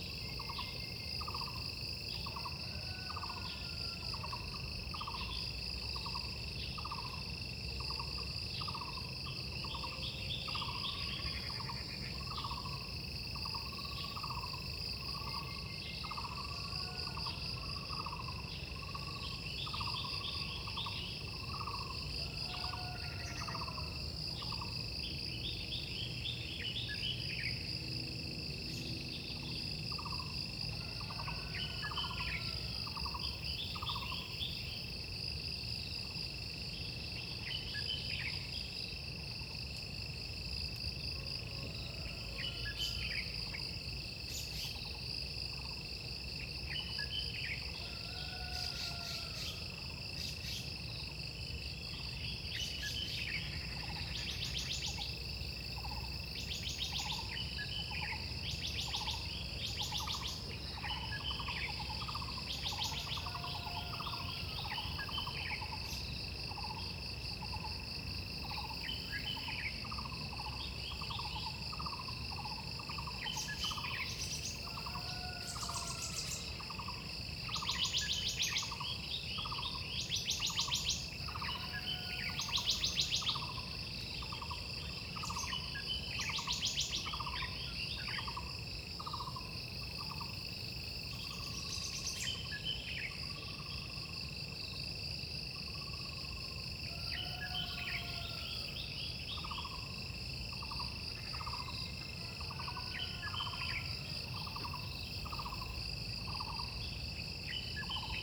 中路坑, 桃米里 - Sound of insects and birds
In the woods, Sound of insects, Bird sounds
Zoom H2n MS+XY